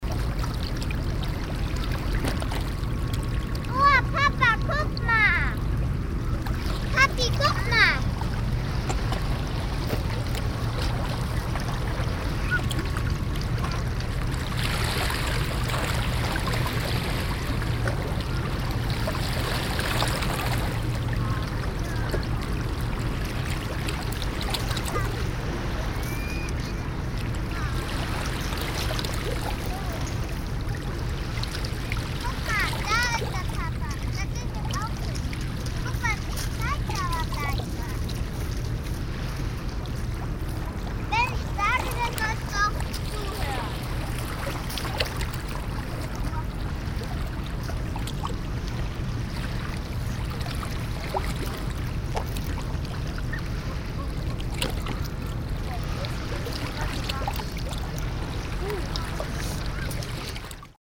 cologne, rodenkirchen, at the rhine
at the rhine water, children playing aside
soundmap nrw: social ambiences/ listen to the people in & outdoor topographic field recordings